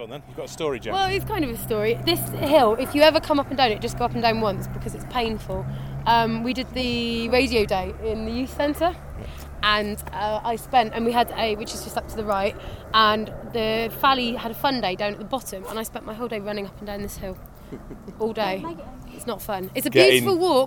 Walk Three: Running up the hill
Plymouth, UK, 4 October 2010, 16:10